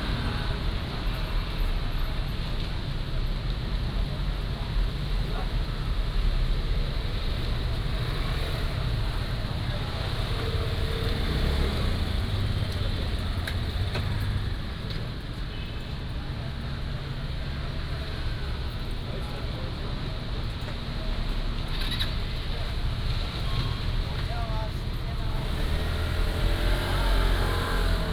Zhonghua Rd., Magong City - Traffic Sound
Traffic Sound, In the side of the road